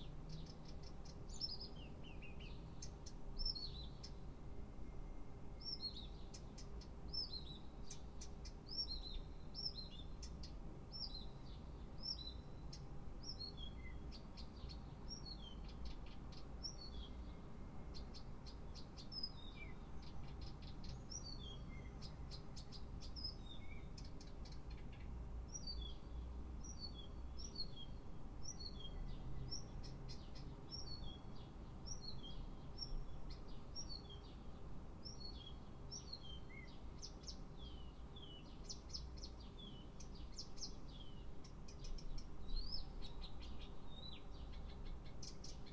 Одесская ул., корпус, Москва, Россия - Birds singing in the morning
Birds are singing in the street. There is a construction site not far from the place, and the sound signals made by trucks can be heard.
Центральный федеральный округ, Россия, 2 June 2022, 07:08